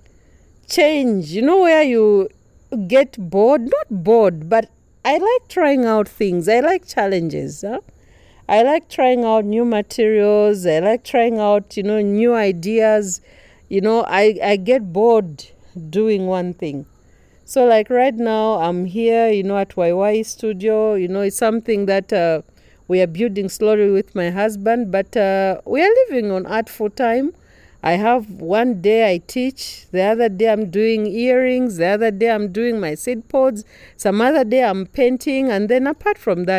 {"title": "Wayi Wayi Gallery & Studio, Livingstone, Zambia - Agness Buya Yombwe outside Wayi Wayi in the evening....", "date": "2012-11-13 20:05:00", "description": "The conversation took place outside Agness’ home, the Y-shaped house of Wayi Wayi Studio & Gallery (in fact, inside, after the first track since it started raining). Agness was busy preparing for the Arts and Crafts Fair in Lusaka. A thousand things were to be done; but she still made time in the evening to take me – and future listeners - on a journey of the Mbusa, the artifacts, the rituals, the ceremony, the women’s teachings for life.\nA Visual Artist, designer and art teacher from Lusaka, Agness founded and runs Wayi Wayi Art Studio & Gallery with her husband, the painter Laurence Yombwe, in Livingstone.", "latitude": "-17.84", "longitude": "25.86", "altitude": "955", "timezone": "Africa/Lusaka"}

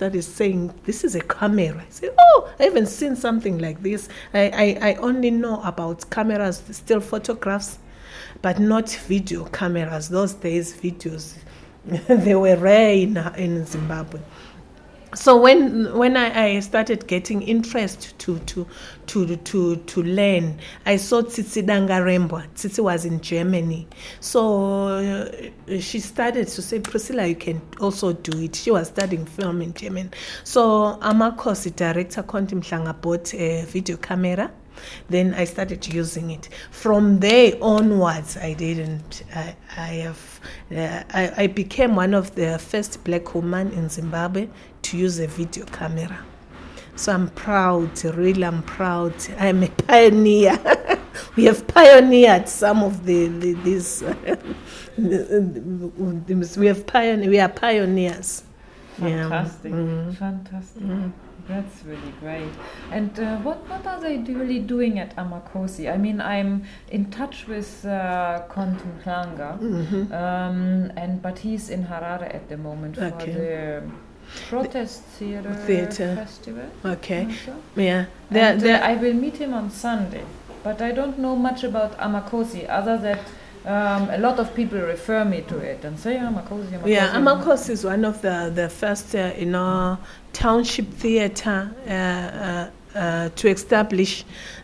{"title": "Makokoba, NGZ, Studio of the painter Nonhlanhla Mathe, Bulawayo, Zimbabwe - Priscilla Sithole - men, women, film and technical jobs….", "date": "2012-10-25 14:35:00", "description": "Priscilla Sithole, pioneering women filmmaker in Bulawayo, here tells her story how she first encountered a movie-camera on one of the tours with Amakhosi Productions to Switzerland, and how life took off from there… today, Priscilla is most dedicated to the task of passing on her skills to young women through her Ibhayisikopo Film Project:\nWe are in the Studio of the painter Nonhlanhla Mathe, and you can here much of the activities in the other studios and the courtyard of the National Gallery… a conversation with our host, Nonhlanhla will follow…", "latitude": "-20.15", "longitude": "28.58", "altitude": "1353", "timezone": "Europe/Berlin"}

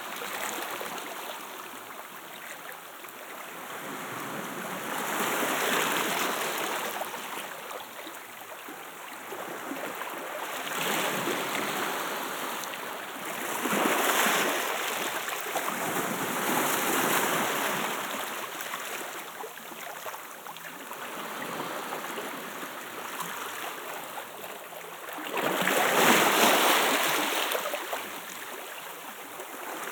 {"title": "Waves at black beach Kambia, Santorini, Grecja - (53) BI Waves at Black beach", "date": "2016-11-20 15:49:00", "description": "Binaural recording of waves at black beach of Kambia.\nZoomH2n, Roland CS-10EM", "latitude": "36.35", "longitude": "25.39", "altitude": "10", "timezone": "Europe/Athens"}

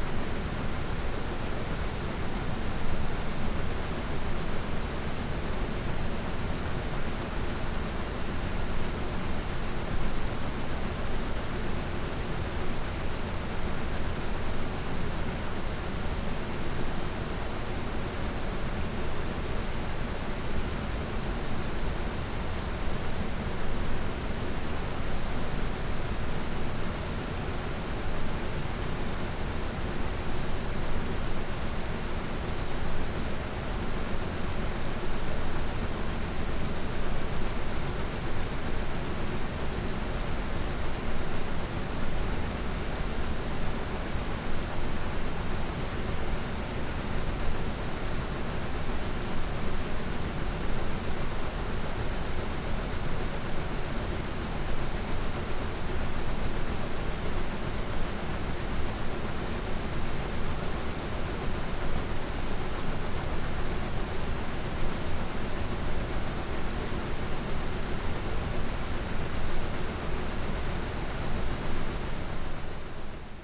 {"title": "Kiekebuscher Spreewehr, Cottbus - river Spree weir noise and rythmic pattern", "date": "2019-08-24 14:05:00", "description": "weir noise at the river Spree, the construction and water flow create a rythmic pattern\n(Sony PCM D50, Primo EM172)", "latitude": "51.73", "longitude": "14.36", "timezone": "GMT+1"}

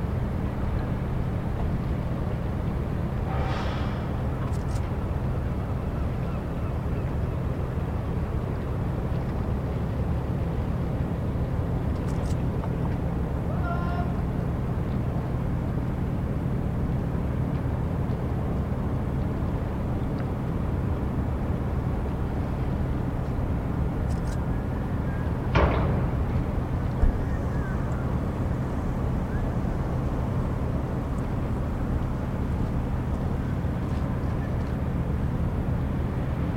{"title": "Dunkerque Port Socarenam - DK Port Socarenam", "date": "2009-04-16 00:48:00", "description": "Repair dock at Dunkerque harbour on Christmas eve 2008. Zoom H2.", "latitude": "51.05", "longitude": "2.36", "altitude": "6", "timezone": "Europe/Berlin"}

